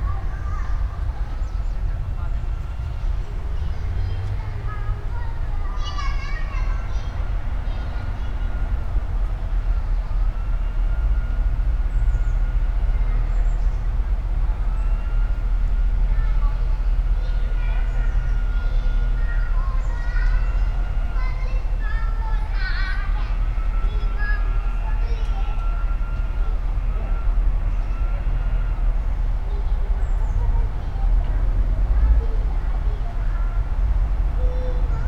all the mornings of the ... - jul 31 2013 wednesday 08:26